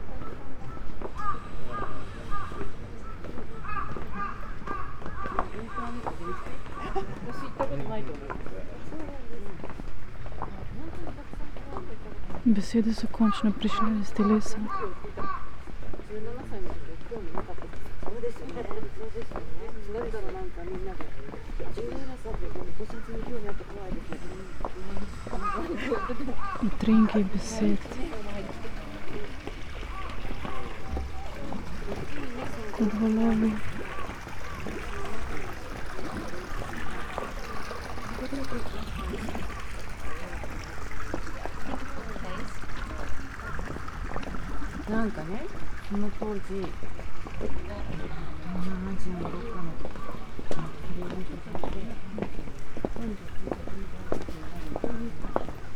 hase-dera, path, kamakura, japan - full moon rises above kamakura sea